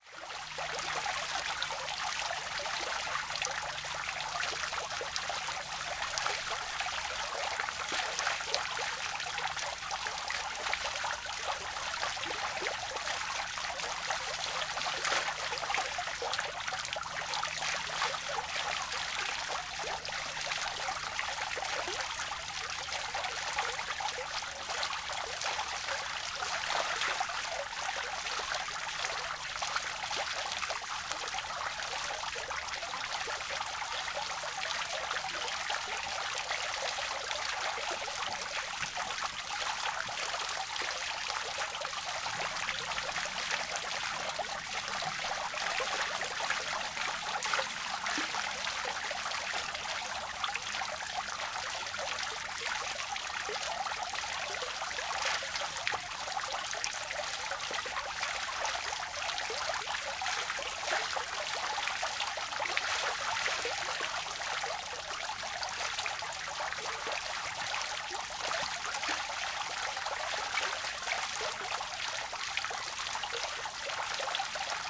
Botanischer Garten, Philosophenweg, Oldenburg - fountain
botanical Garden, small fountain
(Sony PCM D50, internal mics 120°)
Oldenburg, Germany, 26 May